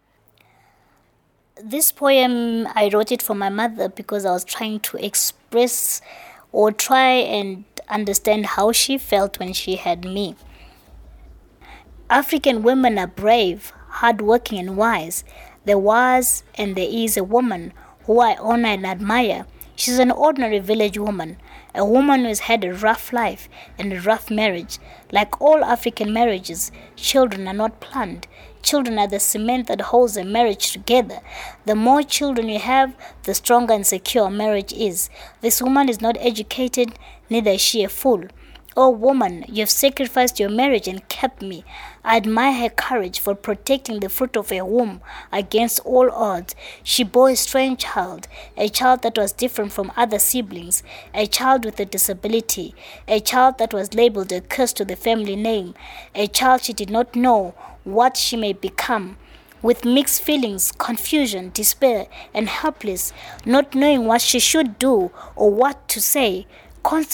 Makokoba, Bulawayo, Zimbabwe - Soneni celebrates her mother…
…and all the mothers raising children with disabilities. We recorded an interview and some of her poems with Soneni in her home. It’s about midday and a party was getting into full swing across the road…
Soneni Gwizi is a writer and poet, an award-winning activist for the rights of women and differently abled people, a broadcaster with ZBC and currently an UNWTO ambassador 2013.
27 October, ~14:00